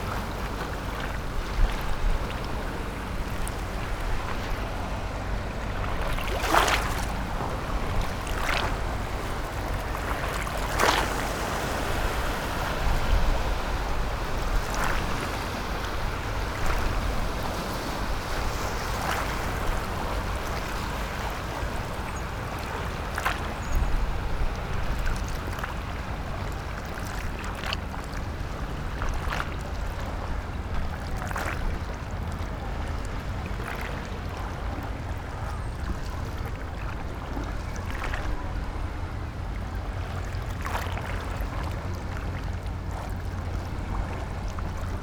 左岸八里碼頭, New Taipei City - Standing on the wave
Bali District, 左岸八里碼頭, 1 July